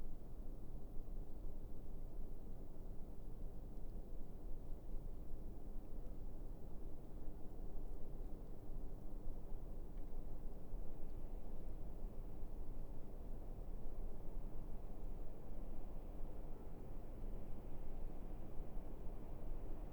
{
  "title": "Liptovská Kokava, Slovakia - Liptovká Kokava, Slovakia: Wind Across Snowy Fields",
  "date": "2019-01-03 21:30:00",
  "description": "Winter in Liptovská Kokava village in northern part of Slovakia. Recorded near last house on the street on the border of village before it opens to wide fields. Those are covered with snow, it is freezing and still snowing. Winds blow across vast snowfields which makes an interesting winter soundscape.",
  "latitude": "49.09",
  "longitude": "19.81",
  "altitude": "789",
  "timezone": "Europe/Bratislava"
}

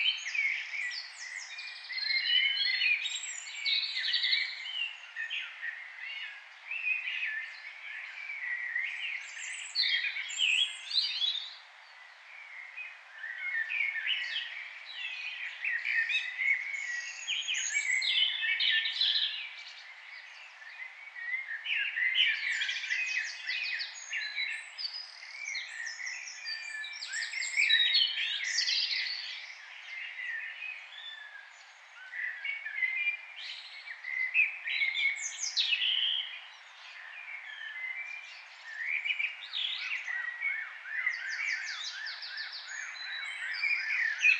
{
  "title": "St Barnabas Rd, Cambridge, UK - Dawn chorus circa June 1998",
  "date": "1998-06-01 04:30:00",
  "description": "Dawn chorus, garden of 9 St Barnabas Rd, circa June 1998. Recorded with Sony Pro Walkman and ECM-929LT stereo mic.",
  "latitude": "52.20",
  "longitude": "0.14",
  "altitude": "20",
  "timezone": "Europe/London"
}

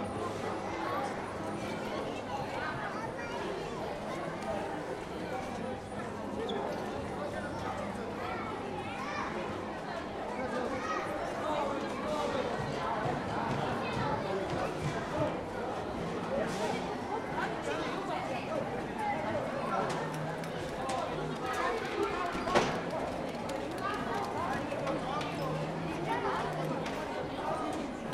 {
  "title": "National amusement park, Ulaanbaatar, Mongolei - whac a mole!",
  "date": "2013-06-01 15:12:00",
  "description": "children's day, situation where everyone at the same time 'whac a mole' - long preperation, short game",
  "latitude": "47.91",
  "longitude": "106.92",
  "altitude": "1292",
  "timezone": "Asia/Ulaanbaatar"
}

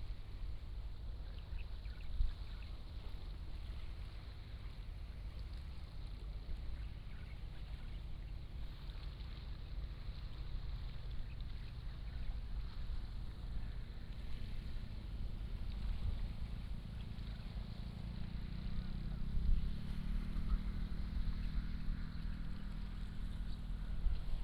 {
  "title": "落日亭, Hsiao Liouciou Island - On the coast",
  "date": "2014-11-02 08:32:00",
  "description": "On the coast, Fishing boat on the sea, Birds singing",
  "latitude": "22.32",
  "longitude": "120.35",
  "altitude": "2",
  "timezone": "Asia/Taipei"
}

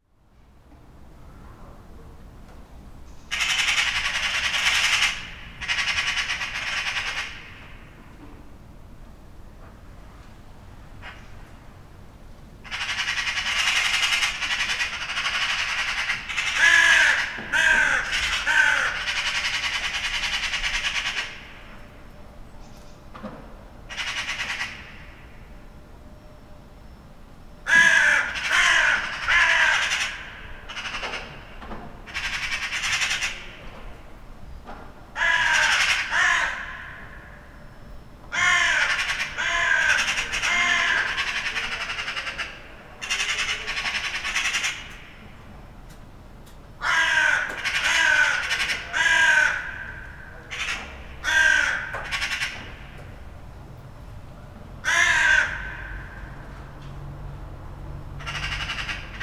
{
  "title": "Berlin Bürknerstr., backyard window - magpies and dun crows",
  "date": "2013-02-10 13:45:00",
  "description": "magpies and dun crows get excited about something\n(sony pcm d50)",
  "latitude": "52.49",
  "longitude": "13.42",
  "altitude": "45",
  "timezone": "Europe/Berlin"
}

